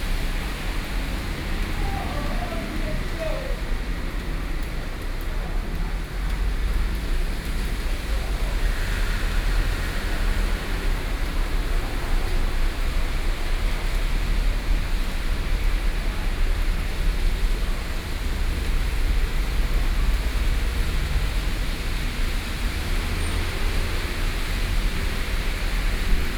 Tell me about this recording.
Rainy Day, At the hospital gate, Between incoming and outgoing person, Vehicle sound, Binaural recordings, Zoom H4n+ Soundman OKM II